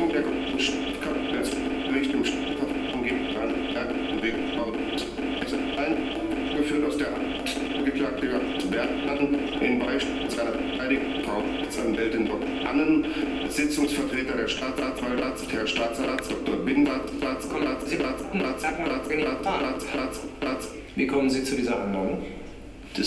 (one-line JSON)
{"title": "berlin, friedelstraße: das büro - the city, the country & me: television interference", "date": "2015-02-13 21:02:00", "description": "television interference while watching tv. the next day the newspaper reported that the central clock system of the public television station was broken. for more than 30 minutes the problem could not be solved.\nthe city, the country & me: february 13, 2015", "latitude": "52.49", "longitude": "13.43", "altitude": "43", "timezone": "Europe/Berlin"}